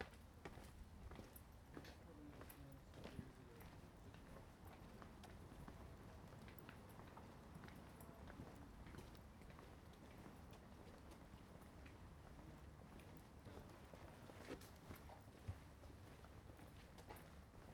Casement square, Cobh, Co. Cork, Ireland - world listening day soundwalk
an excerpt from our wld2017 soundwalk
18 July 2017, 13:35